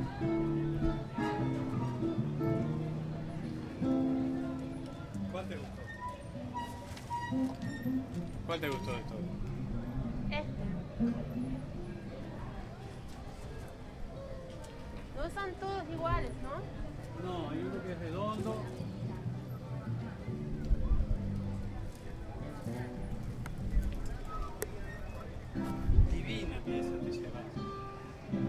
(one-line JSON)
{"title": "Feria Tristan Narvaja, Montevideo, Uruguay - mercadillo y guitarra", "date": "2011-03-25 13:21:00", "description": "We are wondering about the fleemarket of tristan narvaja. accompanied by a guitar player and his soft tunes. I like the transparent curtain.", "latitude": "-34.90", "longitude": "-56.18", "altitude": "23", "timezone": "America/Montevideo"}